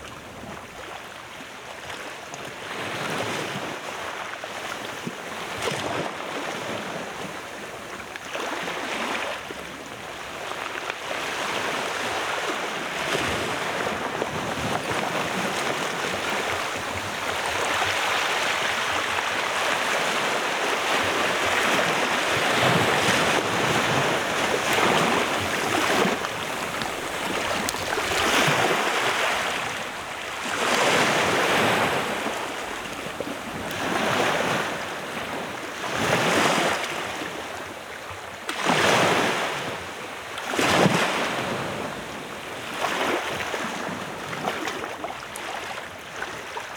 Baie de Pehdé, île de Maré, Nouvelle-Calédonie - Paysage sonore de Maré
Mercredi 11 mars 2020, île de Maré, Nouvelle-Calédonie. Profitons du confinement pour fermer les yeux et ouvrir les oreilles. On commence par le ressac des vagues sur les récifs coralliens de la baie de Péhdé. Puis l'on remonte vers la plage de Nalé par le chemin de brousse. Rapidement faire halte et écouter le crépitement, non pas d'un feu, mais celui des feuilles d'arbres arrosées par la récente pluie. Parmi les chants d'oiseaux se détache celui (a)typique du Polochion moine. Enfin surgissement des cigales avant de repartir vers la côte et la proximité de la route, entre Tadine et Wabao.